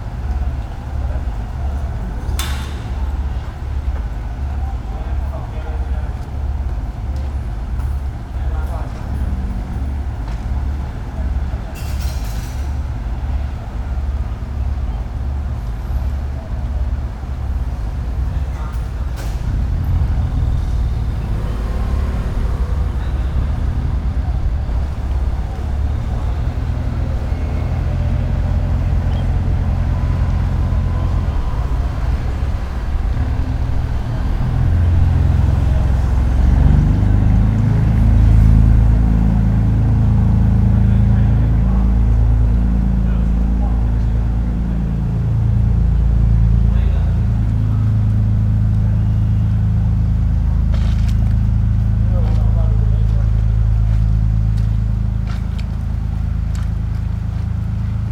Gushan, Kaohsiung - Gushan-Qijin ferry